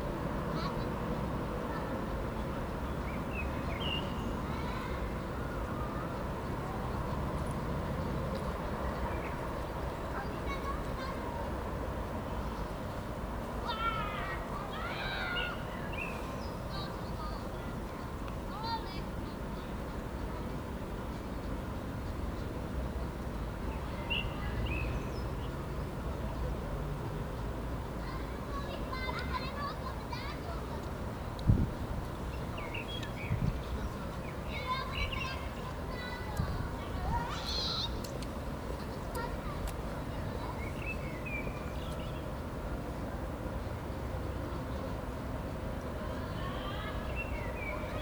Tyrsovy sady, Pardubice, Česko - Tyrsovy sady
Recorded as part of the graduation work on sound perception.
17 April 2017, 13:00